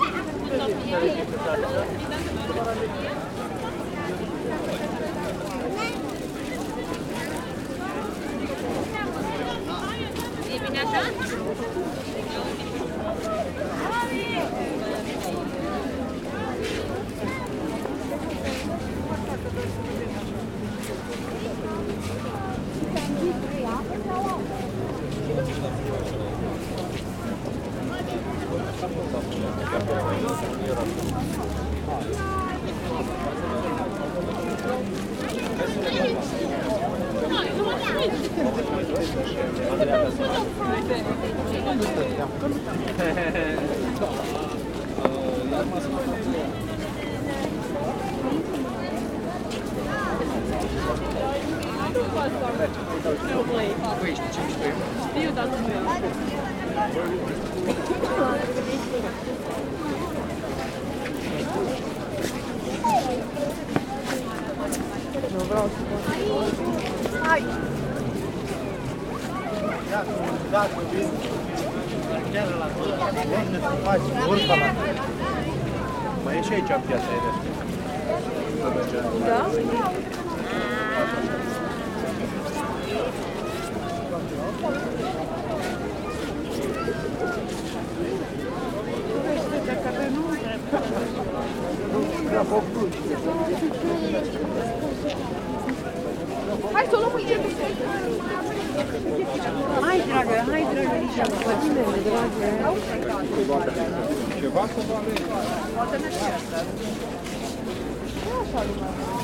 Piața Sfatului, Brașov, Romania - 2016 Christmas in Brasov - Christmas Fair 1
A recording of a Christmas fair in the man square of the city, back in a time where many people could get crowded together...and when coughs didn't freak anyone out :) Recorded with Superlux S502 Stereo ORTF mic and a Zoom F8 recorder.
România